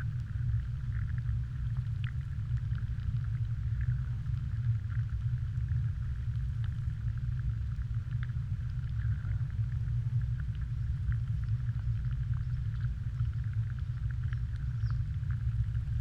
{
  "title": "Lithuania, Pakalniai, dam's backside",
  "date": "2017-07-10 12:45:00",
  "description": "4 tracks: 2 omnis capturing the soundscape and low hum of the tube, and 2 hydros capturing aquatic life",
  "latitude": "55.48",
  "longitude": "25.40",
  "altitude": "155",
  "timezone": "Europe/Vilnius"
}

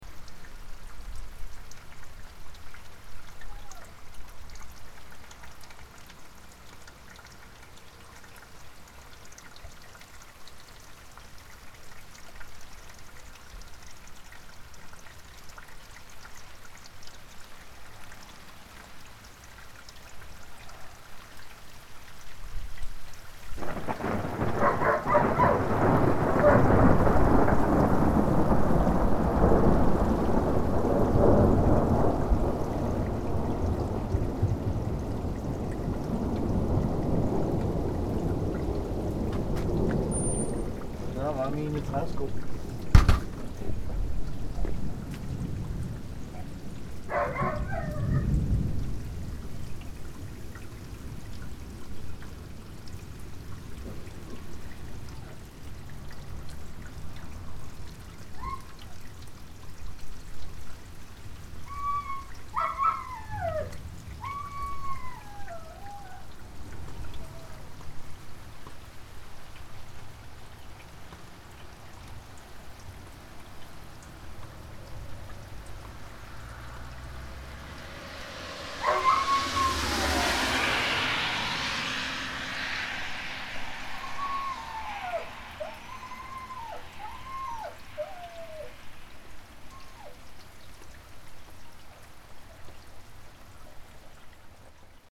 {
  "title": "Fredericia, Danmark - Thunder and dog",
  "date": "2013-06-13 17:45:00",
  "description": "My dads dog wanted to be a part of this recorder of thunder.",
  "latitude": "55.57",
  "longitude": "9.72",
  "altitude": "25",
  "timezone": "Europe/Copenhagen"
}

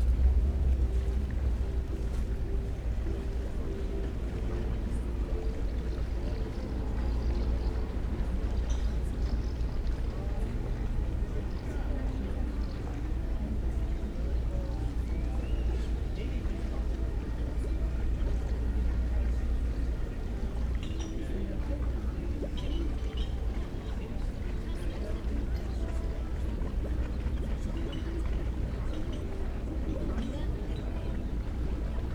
{
  "title": "berlin, landwehrkanal, urbanhafen - drone of passing tourist boat",
  "date": "2011-08-06 19:45:00",
  "description": "engine of a passing tourist boat creates a deep drone and waves.",
  "latitude": "52.50",
  "longitude": "13.41",
  "altitude": "35",
  "timezone": "Europe/Berlin"
}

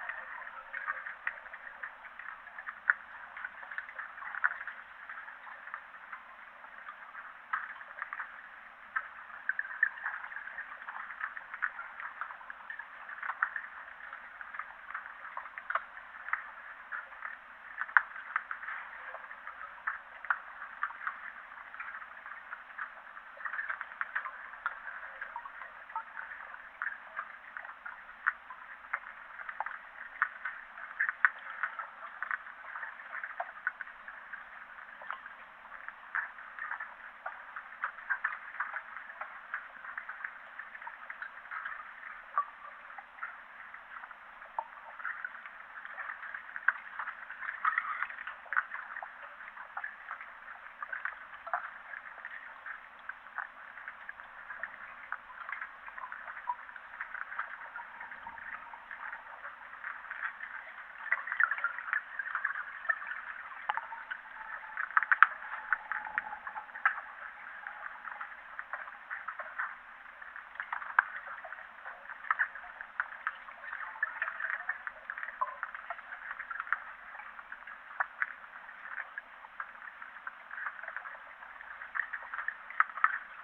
{
  "title": "Utena, Lithuania, evening underwater",
  "date": "2019-09-24 18:20:00",
  "description": "quiet autumn evening. hydrophone",
  "latitude": "55.49",
  "longitude": "25.59",
  "altitude": "106",
  "timezone": "Europe/Vilnius"
}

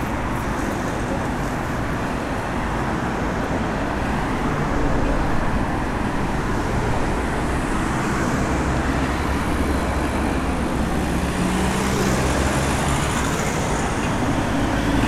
{
  "title": "Đeram, Belgrade, Serbia - Deram Pijaca",
  "date": "2013-08-30 10:24:00",
  "description": "A Soundwalk through Đeram Market in Belgrade",
  "latitude": "44.80",
  "longitude": "20.49",
  "altitude": "148",
  "timezone": "Europe/Belgrade"
}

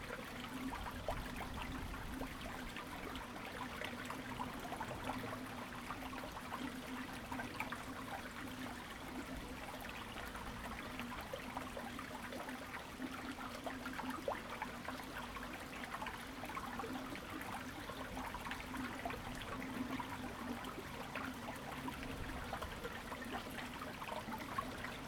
{
  "title": "大竹村, Dawu Township - water and Birdsong",
  "date": "2014-09-05 12:18:00",
  "description": "Watercourse below the ground, The sound of water, Birdsong, Small village\nZoom H2n MS +XY",
  "latitude": "22.42",
  "longitude": "120.93",
  "altitude": "18",
  "timezone": "Asia/Taipei"
}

